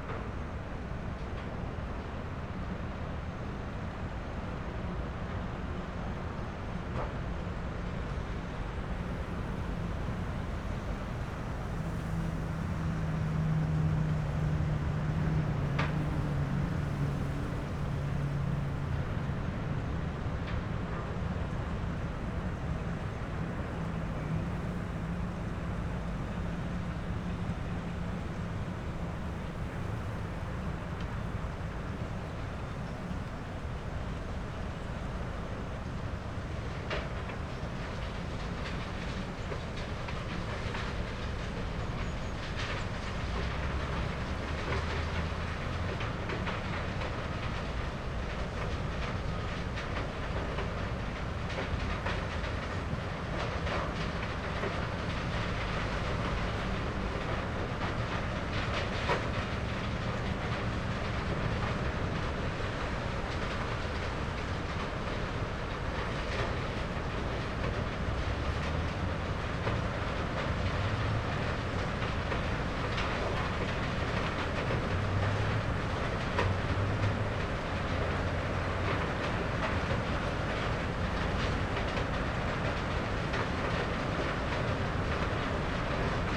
Steinbruch Steeden, Deutschland - lime stone quarry ambience, stone shredder
lime stone quarry ambience, sound of stone shredder at work
(Sony PCM D50, Primo EM272)
Hessen, Deutschland, 7 February